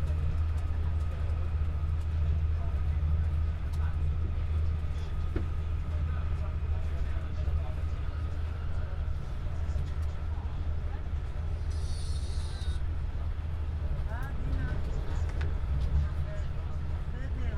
התעש, רמת גן, ישראל - in the train
a recording from my seat in the train
March 2021